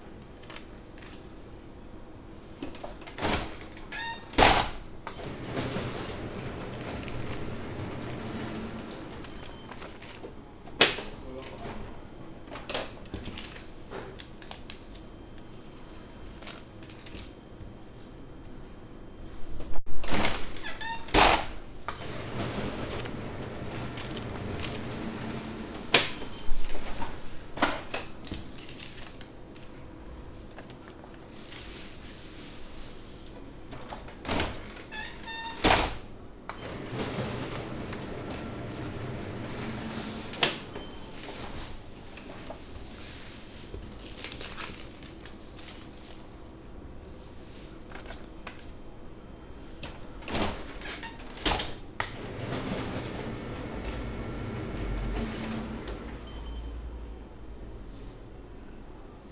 öffnen und schliessen des automaten, der kopiervorgang, im hintergrund atmo anderer kopiervorgänge
soundmap nrw: social ambiences/ listen to the people - in & outdoor nearfield recordings